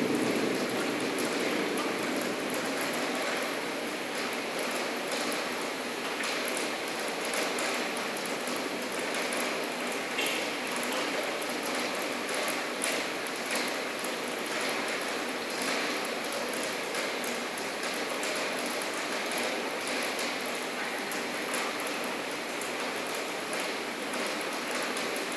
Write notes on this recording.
Spring in Berlin. Tascam DR-05.